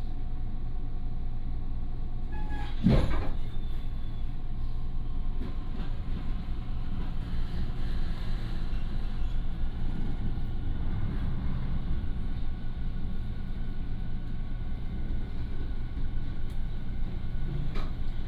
Shalun Line, Guiren District - In the train compartment
from Shalun Station to Chang Jung Christian University Station
Guiren District, Tainan City, Taiwan